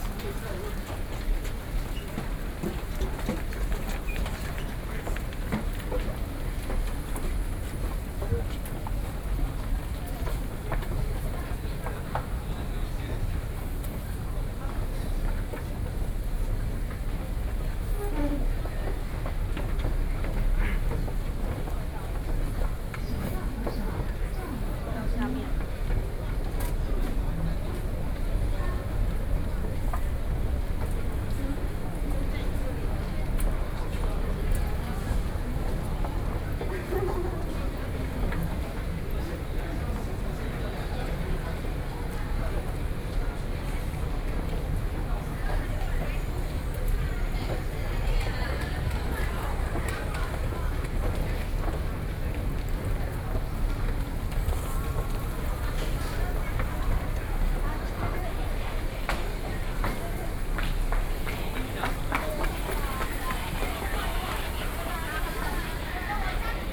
Taipei Main Station, Taiwan - Soundwalk
walking into the Taipei Main Station, Sony PCM D50 + Soundman OKM II
台北市 (Taipei City), 中華民國